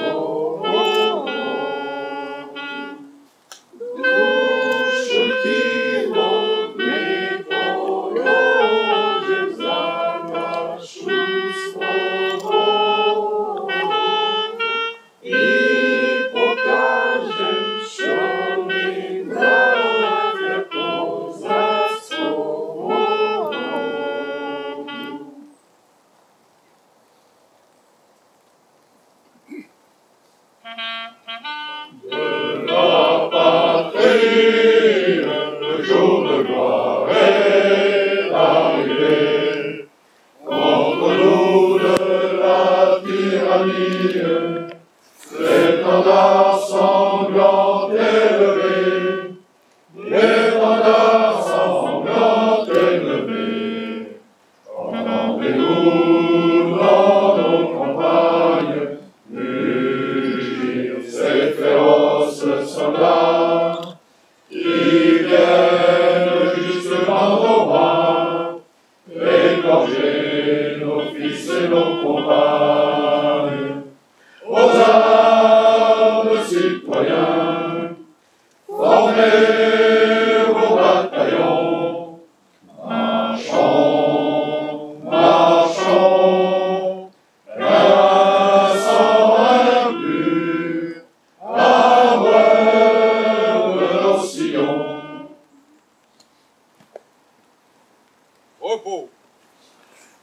Avenue de la Libération, Peynier, France - commémoration aux volontaires Ukrainiens en 40
Exilés politiques ou réfugiés économiques, quelques milliers de volontaires Ukrainiens s'engagent dans la légion étrangère et se retrouvent à Peynier près de Marseille en 1940.
La municipalité de Peynier, la légion étrangère, l'association des descendants des volontaires Ukrainiens de la légion étrangère se retrouvent chaque année le 2 novembre pour en rappeler le souvenir.
Political exiles or economic refugees, a few thousand Ukrainian volunteers join the foreign legion and meet at Peynier near Marseille in 1940.
The municipality of Peynier, the foreign legion, the association of the descendants of Ukrainian volunteers of the foreign legion meet every year on November 2 to remember the memory.
2 November 2018, 09:30